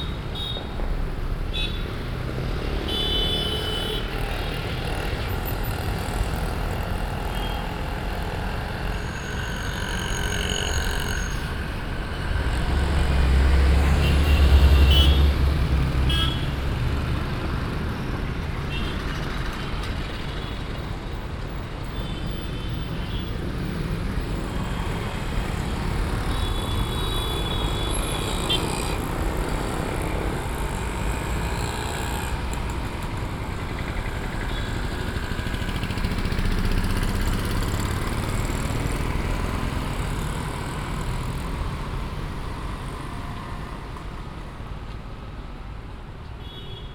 bangalor, karnataka, 24th main street
another recording at the same postion - this time on a monday morning ;-)
international city scapes - social ambiences and topographic field recordings
Bengaluru, Karnataka, India, 14 February 2011